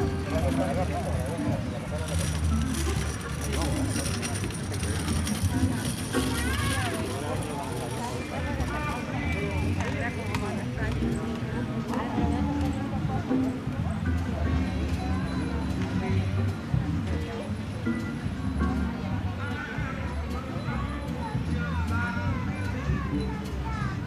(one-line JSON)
{"title": "Passeig Marítim de Neptú, Grau i Platja, Valencia, España - La vida del Paseo de la Playa de Gandía", "date": "2020-08-16 21:06:00", "description": "Todos los años vamos a dar un paseito por Gandía, es una playa muy turística pero con tal de de estar cerca del mar... me vale!!jejeje Fuimos a coger unos helados y paseando por el paseo marítimo empezamos a escuchar a unos músicos de un hotel tocar Jazz, así que nos paramos a escucharles mientras tomabamos el heladillo. Me ha encantado ese contraste del trasiego de la gente, probablemente muchos ajenos a la música, y sin embargo, había varías personas sentadas fuera del hotel escuchando a los músicos, eramos pocos pero... me hizo disfrutar mucho de ese momento y esa mezcla entre el trasiego y el crear una pequeña burbuja para escuchar solo y únicamente a los músicos. Puedo decir que aunque parezca una tontería... ha sido un momento muy especial para mi... :)", "latitude": "39.00", "longitude": "-0.16", "altitude": "8", "timezone": "Europe/Madrid"}